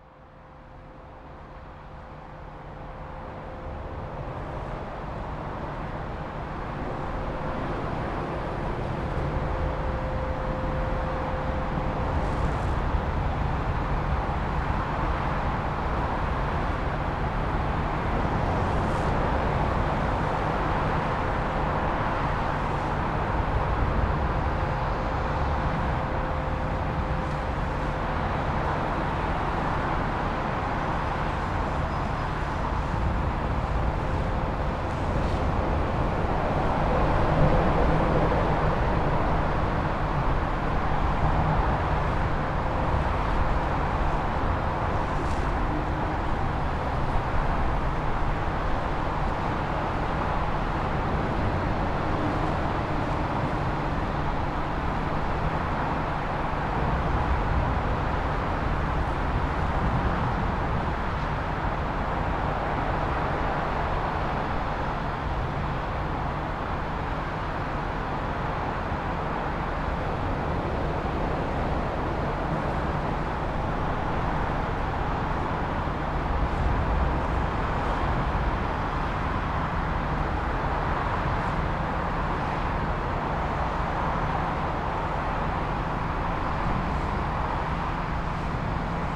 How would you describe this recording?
Recorded beneath Gravelly Hill Interchange in Birmingham, otherwise known as Spaghetti Junction, with a Zoom H4N. We were stood next to Tame Valley Canal with traffic passing approximately 20m above our heads. With thanks to Ian Rawes and Bobbie Gardner.